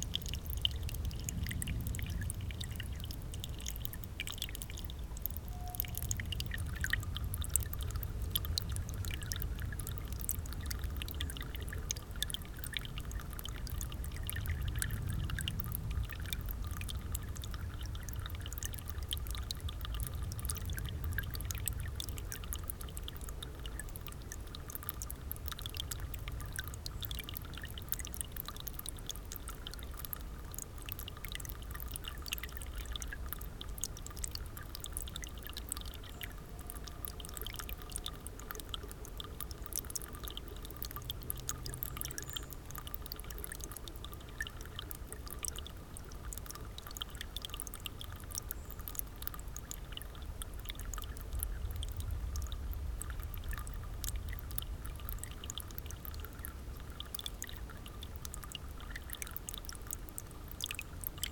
Unnamed Road, Harku, Harju maakond, Eesti - Drainage crossing the health trail.
Drainage crossing the health trail. Bicycles and joggers passing by. Recorder: Zoom H6, MSH-6 mic capsule
30 September